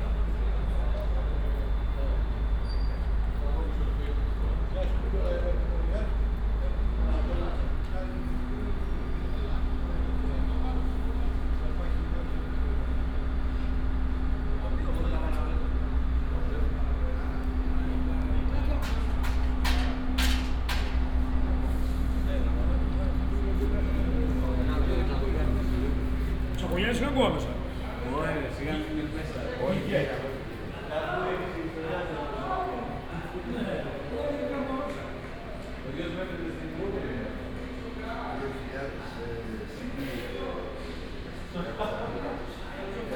Athen, Central Station - station walk
a short walk in Athens central station. This station has about the size of a local suburban train station, somehow odd for such a big city.
(Sony PCM D50, OKM2)